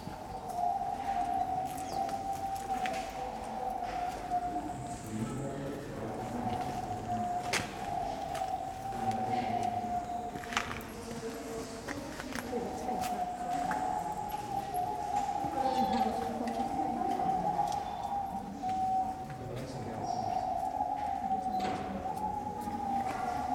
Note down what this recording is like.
small pigeons and other birds in the desert house at Schonbrunn